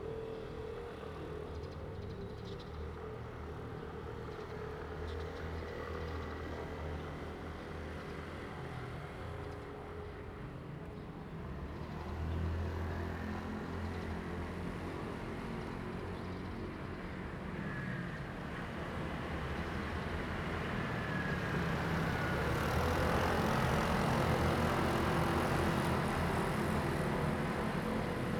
東衛里, Magong City - In the square
In the temple square, Birds singing, Traffic Sound
Zoom H2n MS+XY
Penghu County, Magong City, 22 October 2014, 07:34